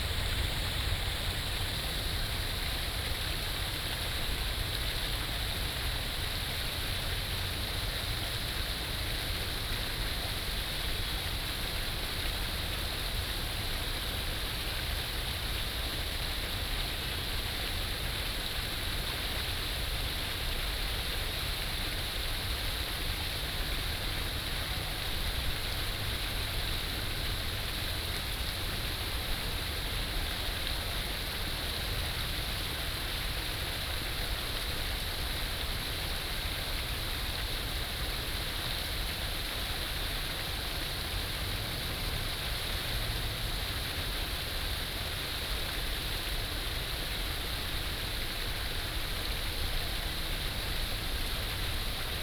燕潭, 臺南公園, Tainan City - Sitting next to the pool
Sitting next to the pool, Traffic sound
Tainan City, Taiwan